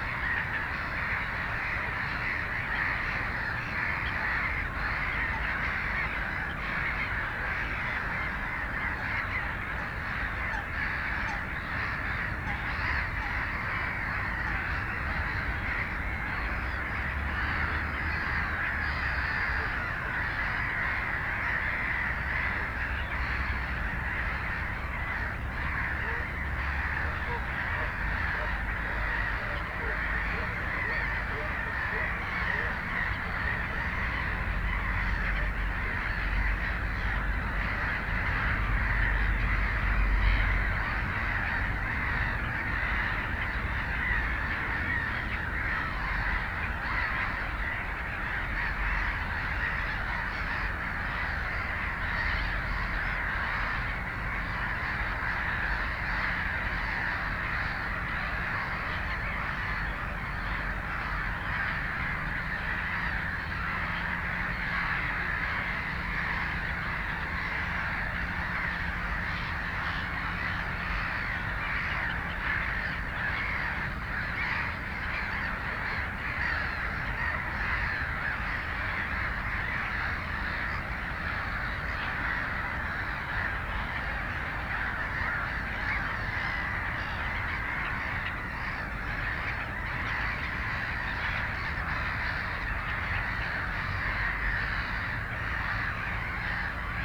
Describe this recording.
canada geese at lake nieder neuendorfer see (a part of the havel river), the city, the country & me: march 17, 2012